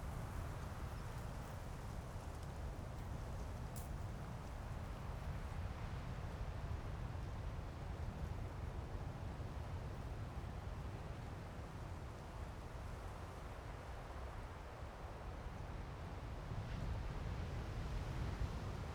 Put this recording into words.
Casuarinaceae, The sound of the wind moving the leaves, Sound of the waves, Zoom H6 M/S